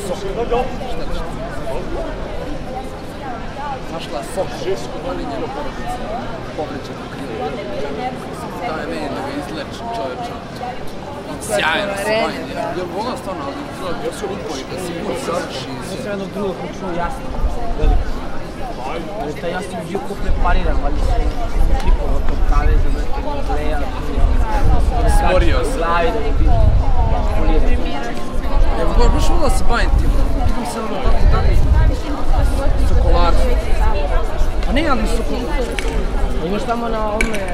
Plato Filozofskog fakulteta 2, (The Faculty of Philosophy) Belgrade